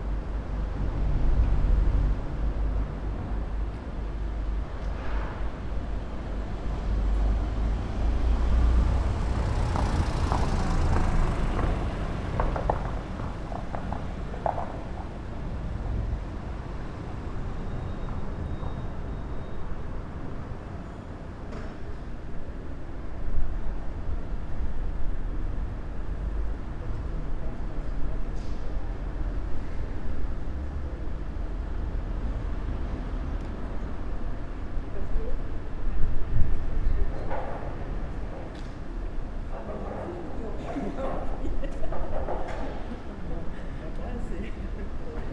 Collégiale Saint-Martin, Angers, France - (607) Church square before noon
Church square before noon; ORTF recording.
recorded with Sony D100
sound posted by Katarzyna Trzeciak
France métropolitaine, France, 23 August 2019